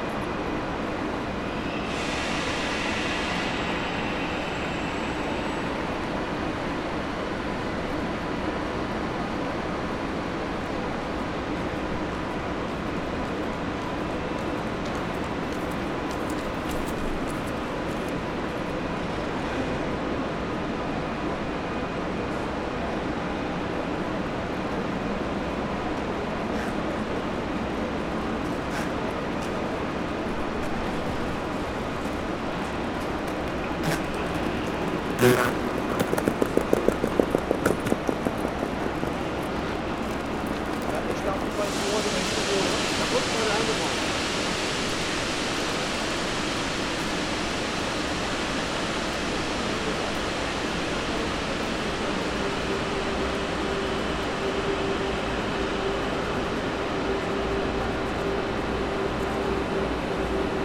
Frankfurt (Main) Hauptbahnhof, Gleiszugang - Gleizugang
This recording, second in the series of recordings during the 'Corona Crisis', starts with a coughing that became a new meaning. The microphone walks into the great hall and rests close to the platform 8. Again there is rather nothing audible which is at that spot remarkable. It is friday at noon, normally the hall is full of people that are hurrying from one platform to another. Here sometimes you hear people running, but not very many...